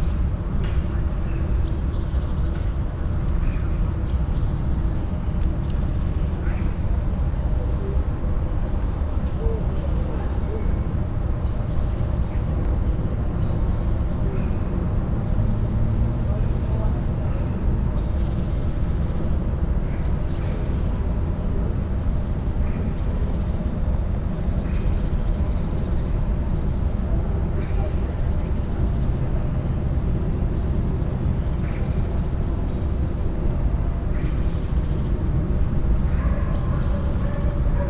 St. Barbara-Klinik Hamm-Heessen, Am Heessener Wald, Hamm, Germany - In the garden of St Barbara
… siting on a bench in the garden of the hospital; right on the edge of the forest; early evening, light is getting dim; an eerie mix of hums from the near by hospital, high flying planes, evening birds and voices in the garden…
… auf einer Bank im Garten des Krankenhauses sitzed; ganz am Rand des Heessener Waldes; Spätsommerabend; Licht schwindet und Geräusche werden lauter; elektrisches Summen der Klinkgebäude, mischt sich unheimlich mit anderen Klängen…